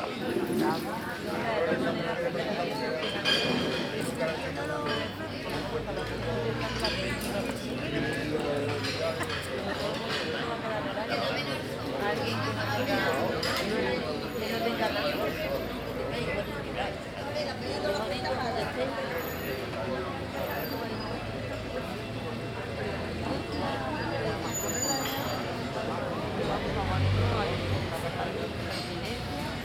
Sevilla, Provinz Sevilla, Spanien - Sevilla - street cafe - morning atmosphere
At a street cafe on a sunday morning - the sound of people talking while having their breakfast - cars and motorcycles passing by.
international city sounds - topographic field recordings and social ambiences